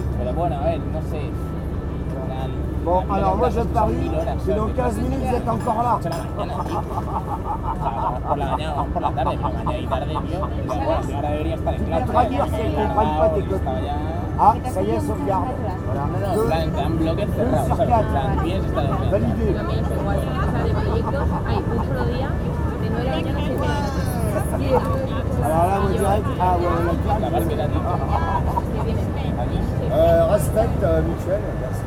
Square du Vert-Galant, Paris, France - Tourists and sun
Spanish tourist are drinking beers and enjoy the sun. A tramp is dredging german girls.